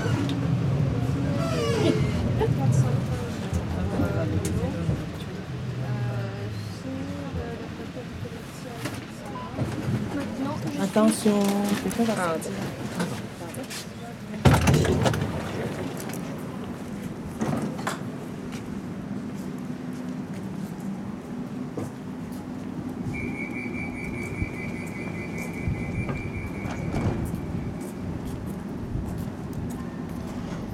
Tram, Bruxelles, Belgique - Tram 92 between Poelaert and Faider
Modern Tram.
Tech Note : Olympus LS5 internal microphones.